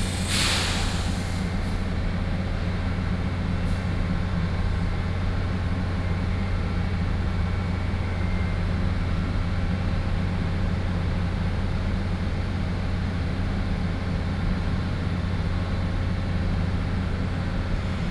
臺中火車站後站, Central Dist., Taichung City - Around the track
For rail, Around the track
6 September, 16:15